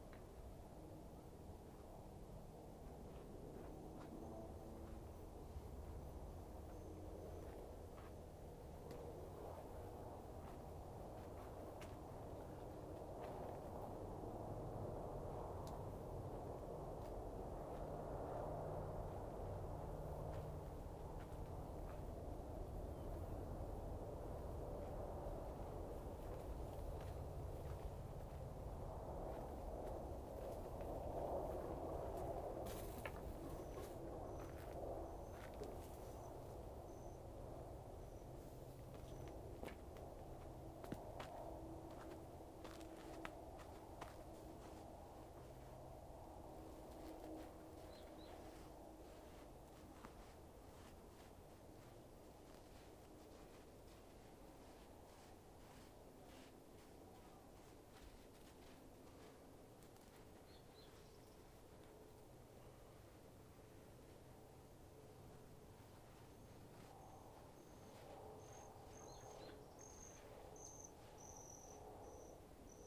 chinchilla listening/recording. recorded on a zoom h4n pro handy recorder
NM, USA, 25 June 2018